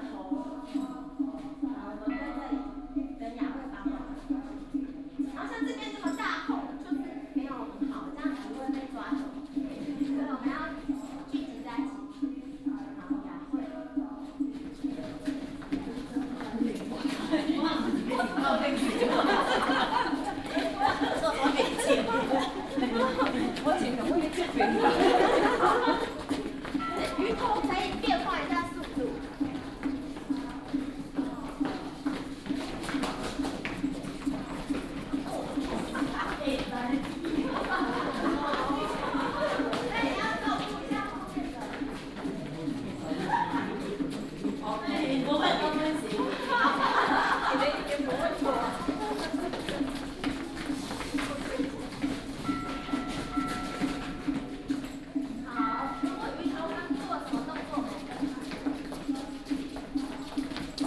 Community Theatre courses, On the square in the temple chanting, Rainy Day